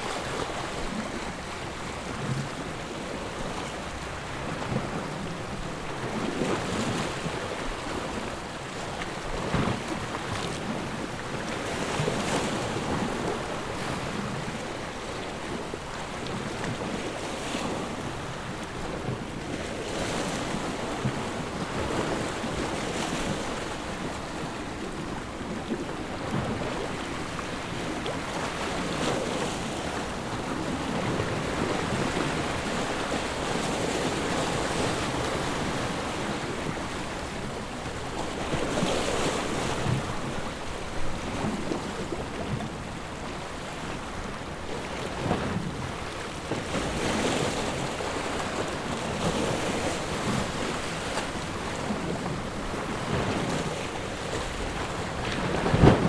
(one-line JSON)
{"title": "Carrer Costa den Josep Macià, 5, 17310 Lloret de Mar, Girona, Испания - Sea hitting big rock", "date": "2018-09-06 16:25:00", "description": "Sea hitting a big rock plato, splashes, rare distance spanish voices.", "latitude": "41.70", "longitude": "2.86", "timezone": "Europe/Madrid"}